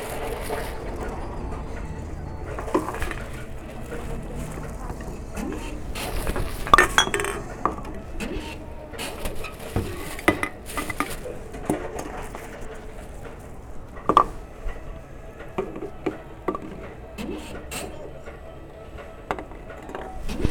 Sollefteå, Sverige - Deposit of beer cans and bottles
On the World Listening Day of 2012 - 18th july 2012. From a soundwalk in Sollefteå, Sweden. Deposit of beer cans and bottles. Coop Konsum food shop in Sollefteå. WLD
Sollefteå, Sweden, 18 July